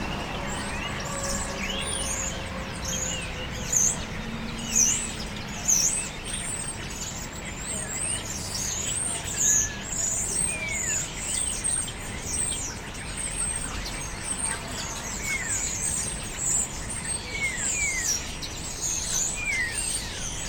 Starlings on Winter evenings in a tree at St. Mary's Butts, Reading, UK - Roosting Starlings
I had noticed on several trips into town that of an evening a certain tree fills with roosting Starlings. The noise is quite amazing of these tiny birds, all gathering in the tree together. In Winter their collected voices offer a sonic brightness to countenance the dismal grey and early darkness of the evenings. To make this recording I stood underneath the tree, very still, listening to the birds congregating in this spot. You can hear also the buses that run past the church yard where the tree stands, and pedestrians walking on the paths that flank it. Recorded with the on board microphones of the EDIROL R-09.
8 January 2015